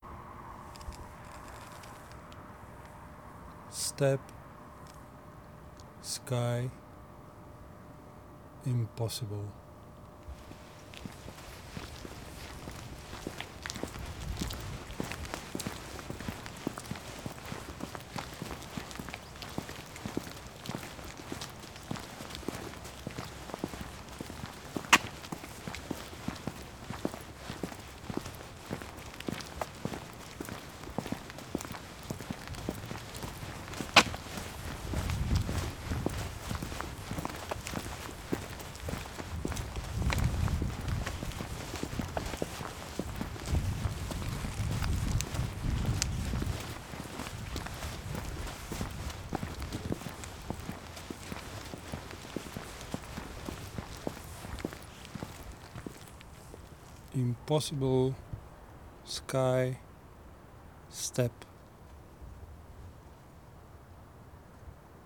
Skoczów, Poland - meet my walk 02
special recording by Wojciech Kucharczyk for the project with Carsten Stabenow for Art Meetings Festival, Kiev, 2015.
part 02/04.
zoom H2.